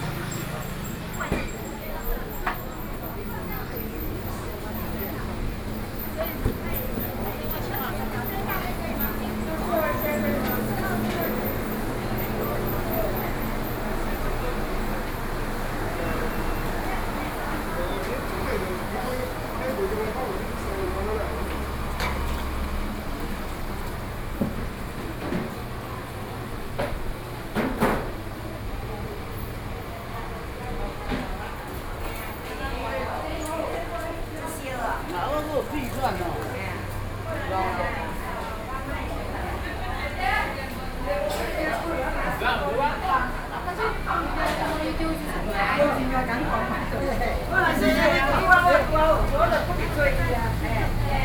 Fude St., 金山區和平里 - Walking in a small alley
Walking through the traditional market, Walking in a small alley
Sony PCM D50+ Soundman OKM II